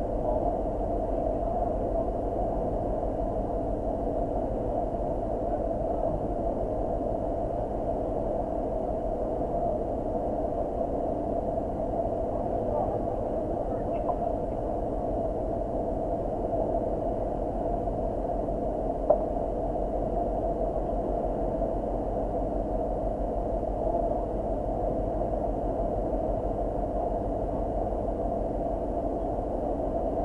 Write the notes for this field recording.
Vibrations recorded on a ferry to the Isle of Islay. Recorded with a Sound Devices MixPre-6 mkII and a LOM Geofón.